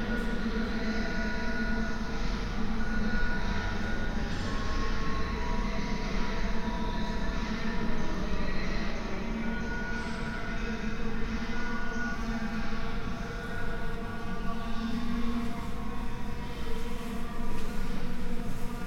Ottignies-Louvain-la-Neuve, Belgium - Underground parking glaucous ambience
Bad weather, alone in the city this evening. I took a walk into the numerous underground parkings of the Louvain-La-Neuve city. The -3 floor is completely desert, no cars but a lot of hideous vandalism. Its a good time to describe a very creepy atmosphere.